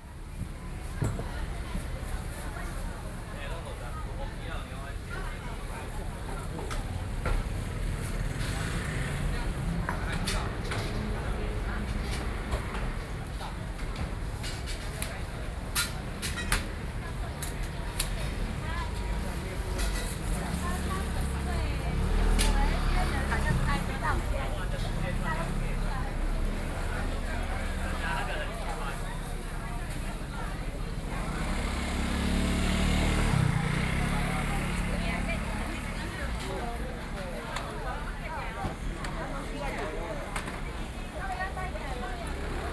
Jǐngměi St, Wenshan District - Traditional markets